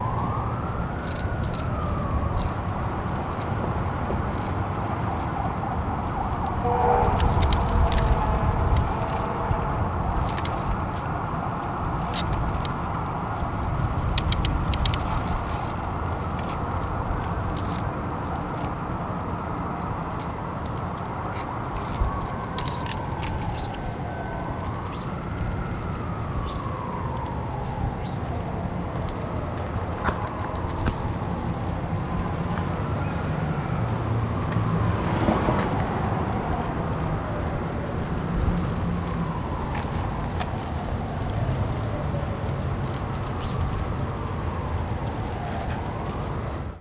{
  "title": "Montreal: 7200 block Ave Champagneur - 7200 block Ave Champagneur",
  "date": "2008-12-08 14:00:00",
  "description": "equipment used: zoom h2\ndried leaves trapped in a fence on a windy day",
  "latitude": "45.53",
  "longitude": "-73.62",
  "altitude": "52",
  "timezone": "America/Montreal"
}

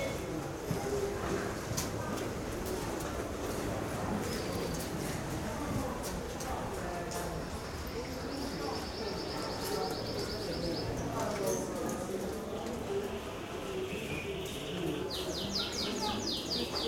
{
  "title": "Bari, Italie - little street from Bari",
  "date": "2015-09-12 11:00:00",
  "description": "a sound from Old Bari...",
  "latitude": "41.13",
  "longitude": "16.87",
  "altitude": "13",
  "timezone": "Europe/Rome"
}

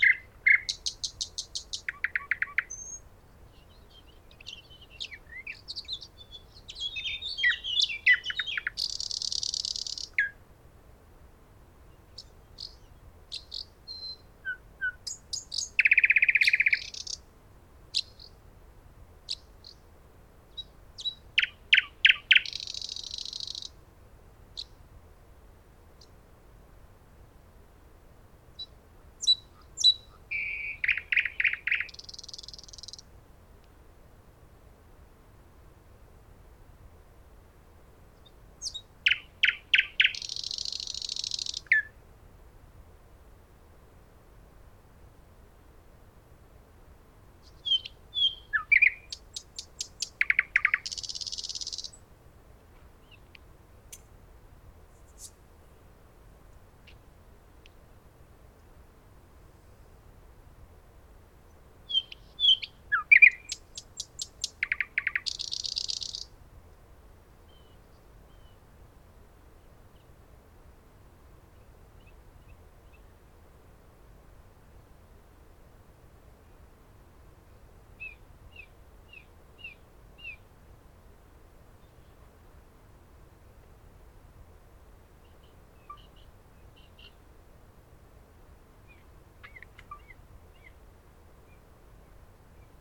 {
  "title": "Im Dornbuschwald, Insel Hiddensee, Deutschland - Nightingale and blackbirds",
  "date": "2019-05-22 21:41:00",
  "description": "Nightingale and blackbirds at Dornbusch Hiddensee\nrecorded with Olympus L11",
  "latitude": "54.60",
  "longitude": "13.11",
  "altitude": "67",
  "timezone": "Europe/Berlin"
}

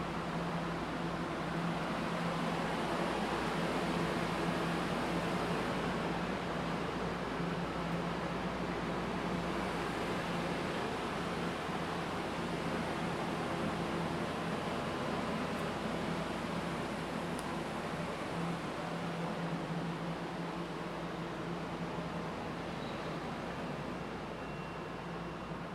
{
  "title": "Midtown, Baltimore, MD, 美国 - Hide in Station",
  "date": "2016-11-07 06:05:00",
  "latitude": "39.31",
  "longitude": "-76.62",
  "altitude": "24",
  "timezone": "America/New_York"
}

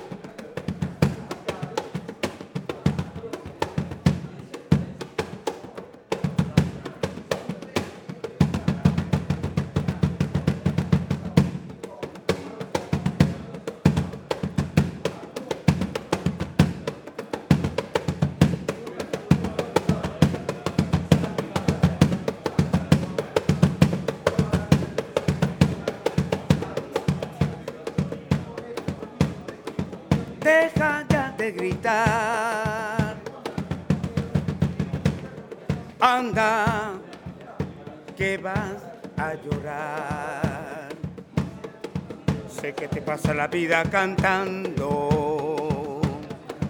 {
  "title": "Malecón Maldonado, Iquitos, Peru - music @",
  "date": "2001-02-02 21:00:00",
  "description": "street musician @ the boulevard in Iquitos.",
  "latitude": "-3.75",
  "longitude": "-73.24",
  "altitude": "94",
  "timezone": "America/Lima"
}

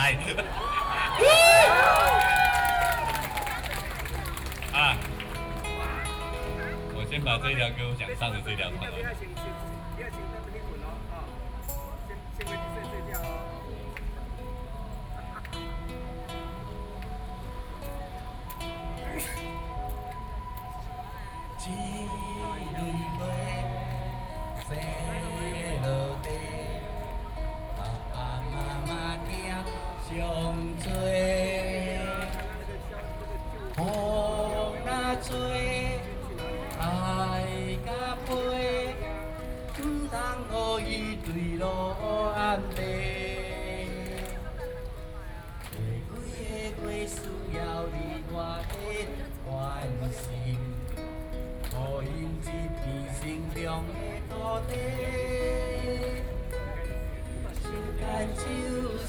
{
  "title": "National Chiang Kai-shek Memorial Hall, Taipei - Cheer",
  "date": "2013-06-14 21:15:00",
  "description": "A long-time opponent of nuclear energy Taiwanese folk singer, Sony PCM D50 + Soundman OKM II",
  "latitude": "25.04",
  "longitude": "121.52",
  "altitude": "8",
  "timezone": "Asia/Taipei"
}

